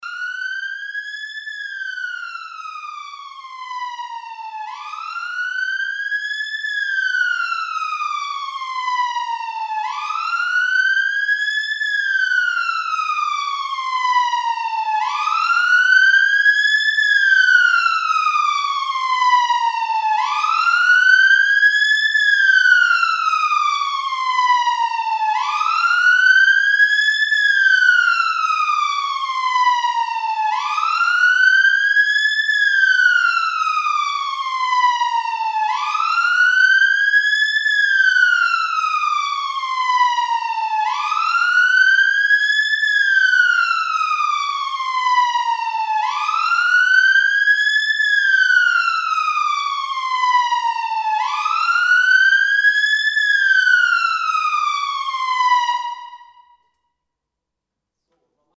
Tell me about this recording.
Another siren signal from a second fire engine. Hosingen, Einsatzzentrum, Sirene, Ein anderes Sirenensignal von einem zweiten Einsatzfahrzeug. Hosingen, centre d'intervention, sirène, Une autre sirène provenant d’un deuxième camion de pompiers.